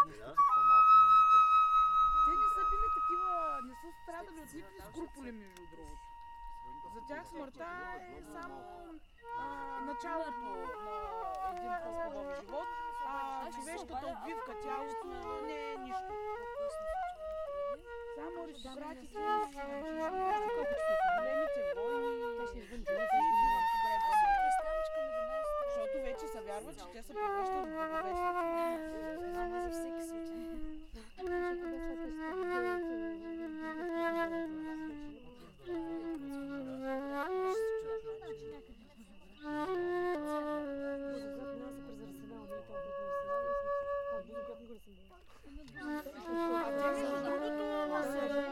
Kazanlak, Bulgaria - Thracians and flute music
A group of local bulgarians camping in the forest surrounding the lake. They talk about thracian heritage in this lands - their music and rituals. One lady plays the flute (music from Debussy), as an example somehow close to what thracians had. There is a camping fire and you can hear the night sounds of the crickets.